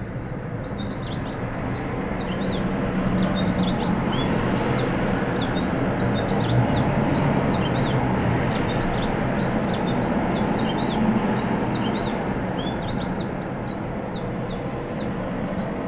Montréal: Ave. Christophe-Colomb - Sidewalk Birdsong
a bird battling the traffic
16 May 2010, 16:01